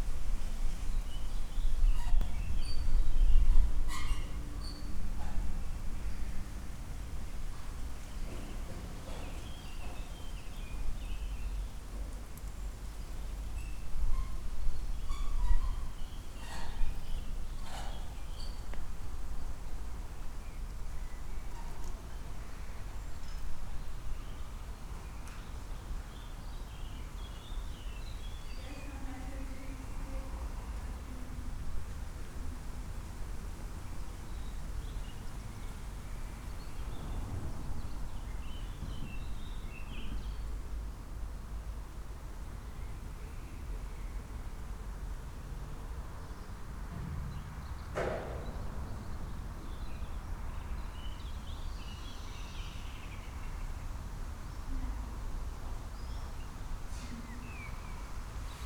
Naumburger Str., Plagwitz, Leipzig, Germany - abandoned factory site, ambience
ambience near an abandoned factory site, sounds of people inside the building, attending a field recording workshop held by Peter Cusack and me.
(Sony PCM D50, DPA4060)
25 May 2015